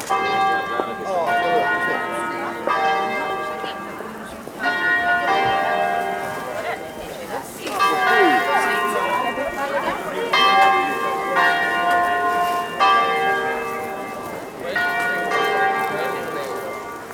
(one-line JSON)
{"title": "Market square, Broni (PV), Italy - Sunday morning open air market", "date": "2012-10-21 10:00:00", "description": "Open air market in the small town of Broni. Quiet people passing by and talking, sellers from different parts of the world call out for shoppers to buy their goods (fruit, vegetable, cheese) by repeating the same leit motiv endelssly (\"la vera toma del Piemonte, Varallo Sesia\")", "latitude": "45.06", "longitude": "9.26", "altitude": "82", "timezone": "Europe/Rome"}